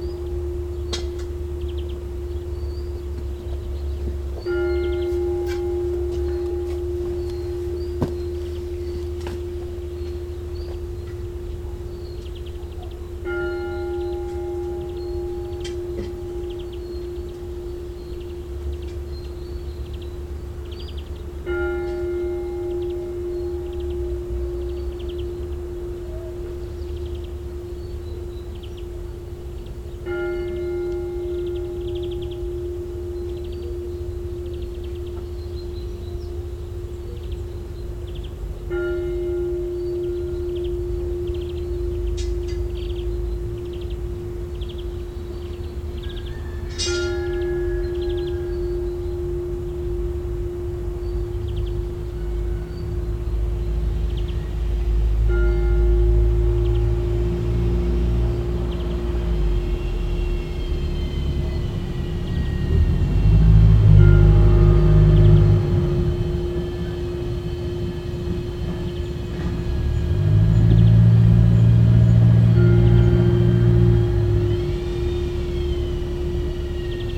Abkhazia, Novii Afon - The bells near the house
We traveled go for a vacation Abkhazia. They took a part of a cozy home. Next to us was a monastery. His sounds are always please us.
Recored with a Zoom H2.
Гудаутский район - Гәдоуҭа араион, 14 May 2014